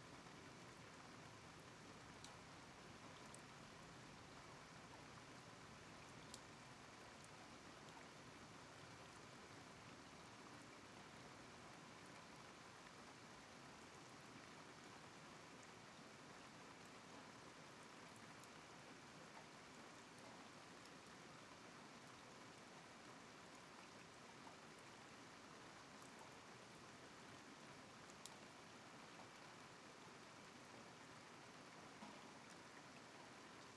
Borough of Colchester, UK - Thunderstorm Over Colchester
Thunderstorm recorded with Roland R44-e + USI Pro overnight(excerpt)
29 May 2017